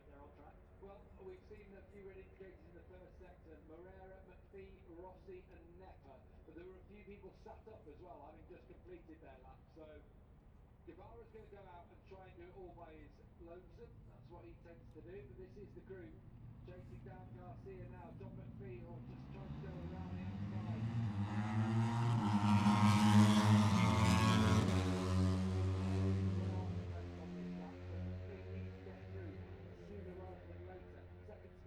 british motorcycle grand prix 2022 ... moto three qualifying two ... outside of copse ... dpa 4060s clipped to bag to zoom h5 ...